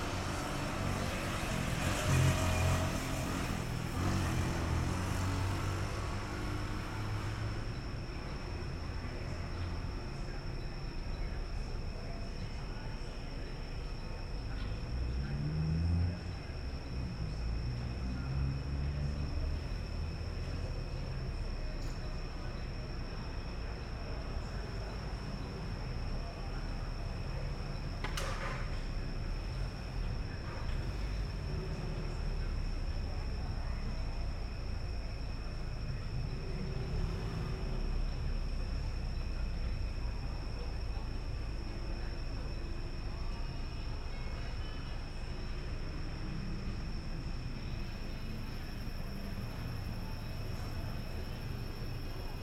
Cra., Medellín, Belén, Medellín, Antioquia, Colombia - Iglesia de Los Alpes
Toma de sonido / Paisaje sonoro de la parte lateral de la iglesia de Los Alpes a media noche, grabada con la grabadora Zoom H6 y el micrófono XY a 120° de apertura. Se puede apreciar al inicio de la grabación el intento de una persona de encender su motocicleta, el paso del metroplus y el pasar de las motocicletas por el lugar.
Grabado por: Andrés Mauricio Escobar
Sonido tónico: Naturaleza, grillos
Señal sonora: Motocicleta encendiendo y pasando.
5 September 2022, ~12:00